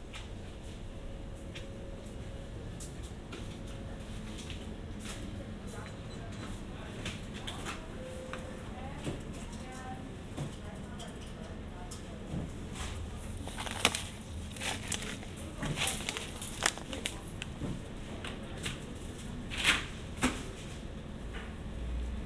Neukölln, Berlin, Deutschland - bio company supermarket
ambience within the Bio Company eco supermarket
(Sennheiser Ambeo Headset, ifon SE)
Berlin, Germany, 25 February 2021